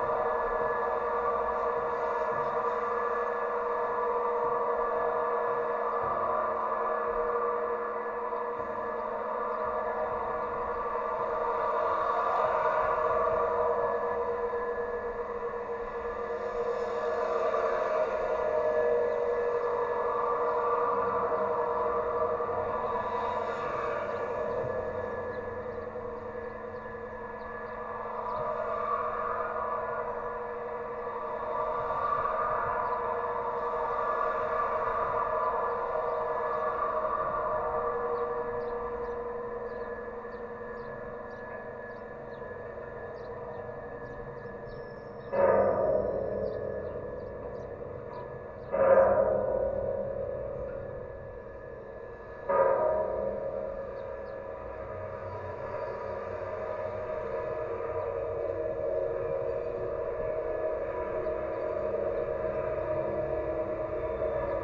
Athen, Flisvos Trokantero, tram station - tram pole singing
tram pole at Trokantero station
(Sony PCM D50, DIY stereo contact mics)